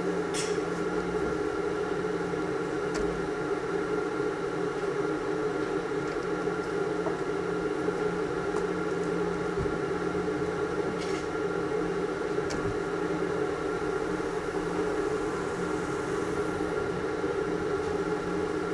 berlin, schinkestraße: in front of turkish restaurant - the city, the country & me: outside ventilation
the city, the country & me: december 17, 2010